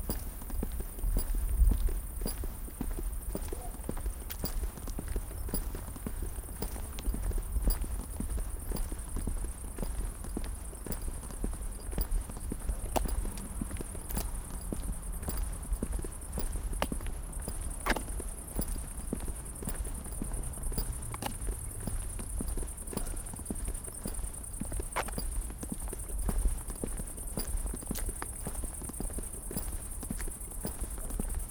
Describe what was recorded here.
An excerpt of a lengthy walk out of the city, with a lot of bags on the shoulders causing interesting rhythmic patterns.